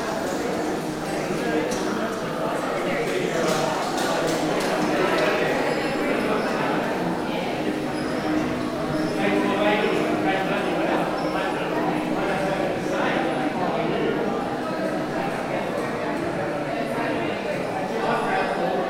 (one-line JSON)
{"title": "neoscenes: Artspace, augment me", "date": "2009-11-19 12:20:00", "description": "augment_me installation opening BRAD MILLER", "latitude": "-33.87", "longitude": "151.22", "altitude": "6", "timezone": "Australia/NSW"}